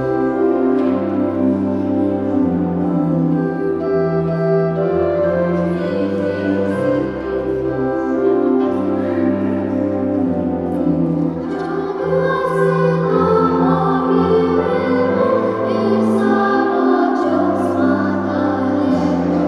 Lithuania, Utena, children rehearsal in the church
children chorus rehearsal in the church
14 January 2011